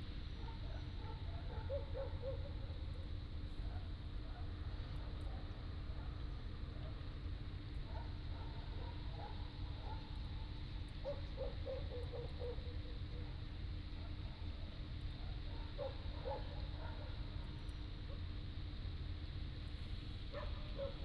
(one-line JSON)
{"title": "Wenhua Rd., Nangan Township - Next to the reservoir", "date": "2014-10-14 17:35:00", "description": "Next to the reservoir, Traffic Sound, Birdsong, Dogs barking", "latitude": "26.15", "longitude": "119.94", "altitude": "28", "timezone": "Asia/Taipei"}